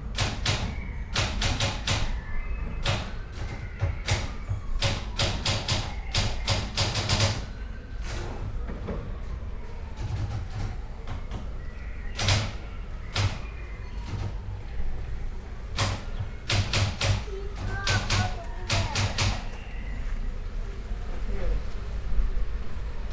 Mladinska, Maribor, Slovenia - typewriter and wind
rewriting 18 textual fragments, written at Karl Liebknecht Straße 11, Berlin, part of ”Sitting by the window, on a white chair. Karl Liebknecht Straße 11, Berlin”
window, wind, typewriter, leaves and tree branches, yard ambiance